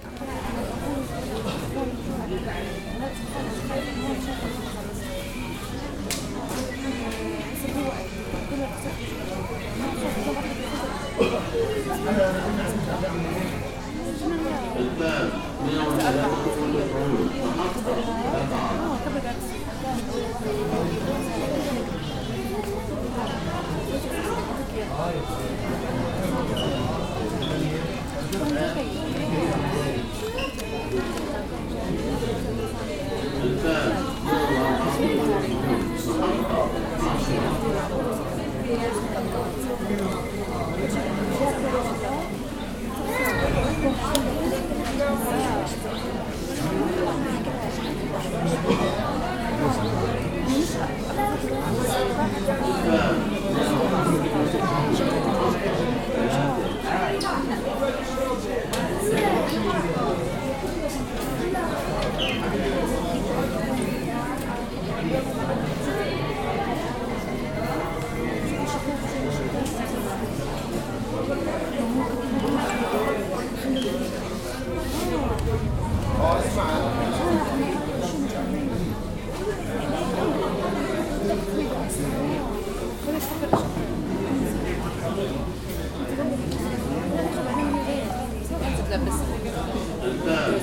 Salah e-Din St, Jerusalem - Post offive at Salah A Din st. Jerusalem
Post offive at Salah A Din st. Jerusalem.
Murmur, Arabic.
March 2019